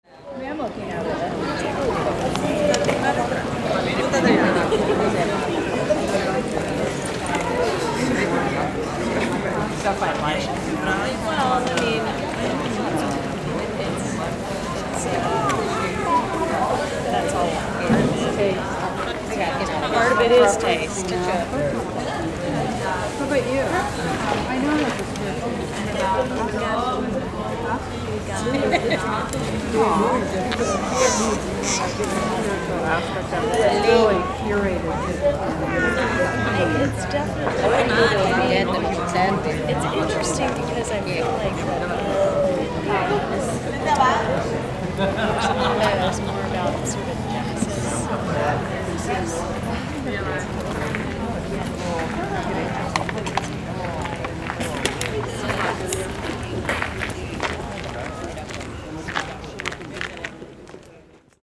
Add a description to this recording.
Giardini at Biennale Venezia, recorded with Zoom H6